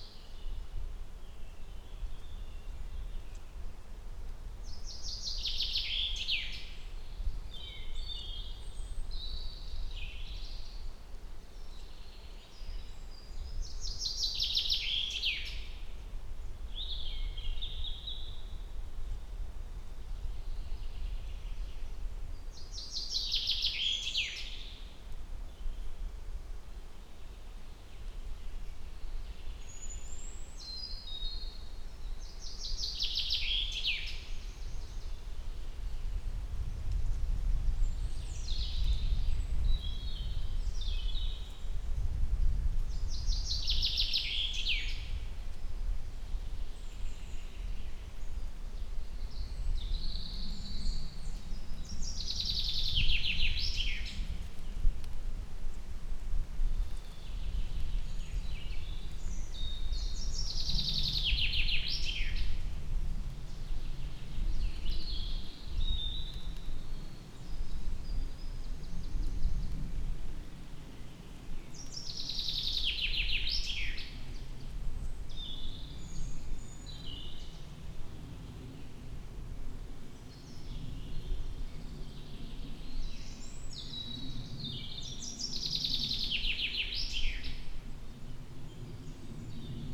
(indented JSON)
{
  "title": "Trnovo pri Gorici, Slovenia - Trnovo forest",
  "date": "2022-06-18 10:08:00",
  "description": "Birds and wind in beech forest.\nMixPre3 II with Lom Uši Pro.",
  "latitude": "45.96",
  "longitude": "13.77",
  "altitude": "907",
  "timezone": "Europe/Ljubljana"
}